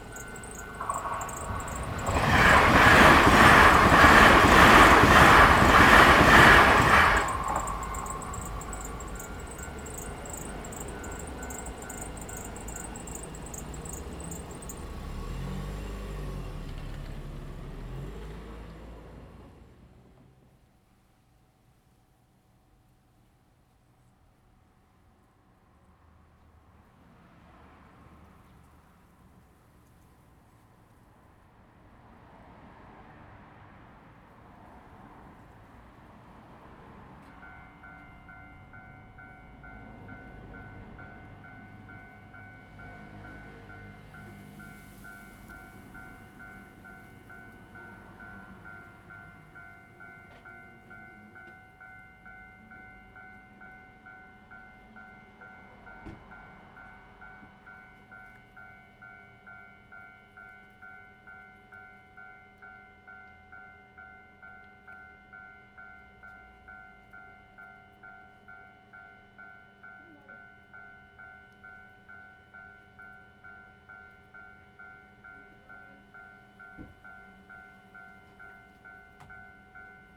Fengzheng Rd., Shoufeng Township - Train traveling through
the sound of Train traveling through, Traffic Sound, Very hot weather
Zoom H2n MS+XY